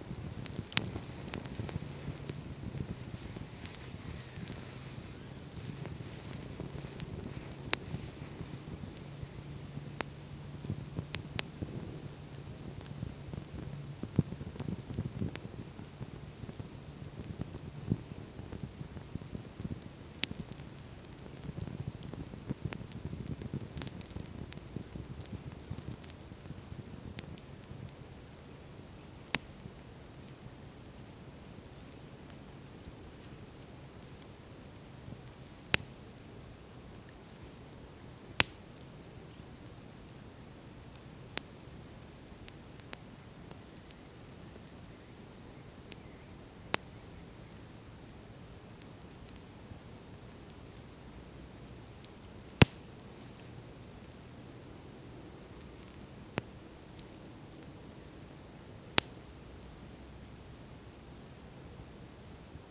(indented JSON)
{
  "title": "Lithuania, Utena, little fireplace in the wood",
  "date": "2011-01-20 12:42:00",
  "description": "little campfire on the snow for my heathen heart",
  "latitude": "55.52",
  "longitude": "25.63",
  "timezone": "Europe/Vilnius"
}